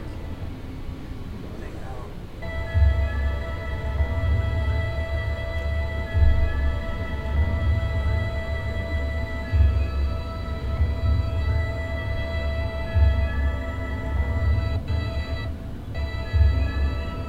karlsruhe, zkm, inside a media installation
inside a round circle media installation that allows the visitor to select 3 D animated screen scenes and follow picture lines that have certain emotional content by picture zapping thru a big international tv scene archive
soundmap d - topographic field recordings and social ambiences